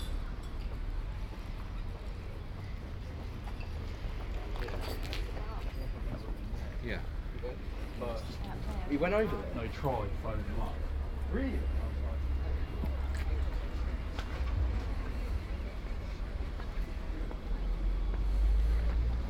Unnamed Road, Folkestone, Regno Unito - GG Folkestone-Harbour-D 190524-h14-30
Total time about 36 min: recording divided in 4 sections: A, B, C, D. Here is the fourth: D.